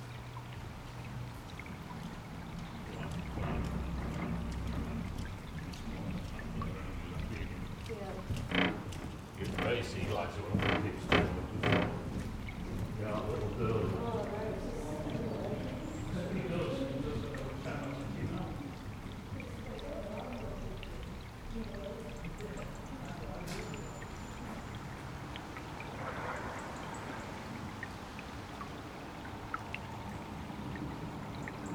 Foundry Ln, Newcastle upon Tyne, UK - Under the bridge, Byker Bank
Walking Festival of Sound
13 October 2019
Under the bridge
Stereo recording (L track DPA4060 omni microphone; R track Aquarian 2 hydrophone), Sound Devices MixPre6
Location
Riverside footpath by the Ouseburn as it passes below the road at Byker Bank
Byker Bank
Newcastle upon Tyne NE6 1LN
54.973393, -1.590369